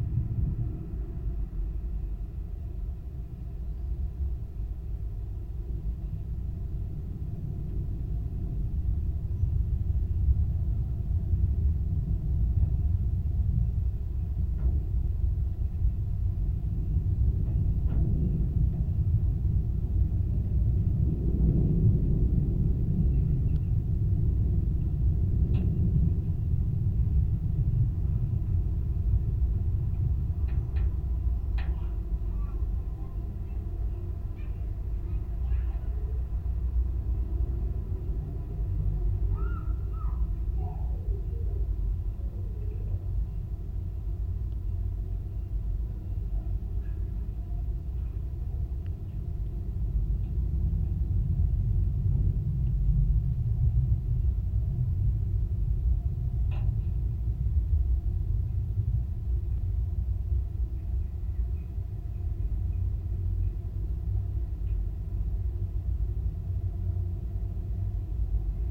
Kaliningrad, Russia, metallic bridge
contact microphones on abandoned bridge, low frequencies
Kaliningradskaya oblast, Russia, 8 June